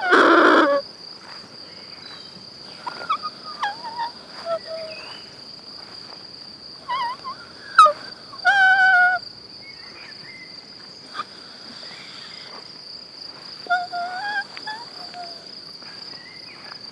path of seasons, meadow, piramida - grass whistle
walk through high grass, far away train, birds, winds ...
23 May 2014, 17:25